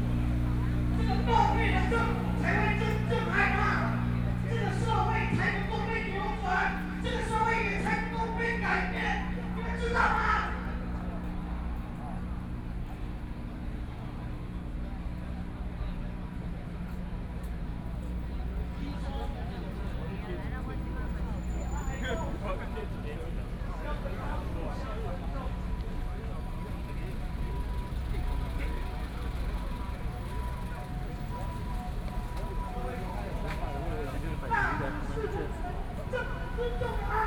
Protest, Sony PCM D50 + Soundman OKM II
Taipei City, Taiwan, August 2013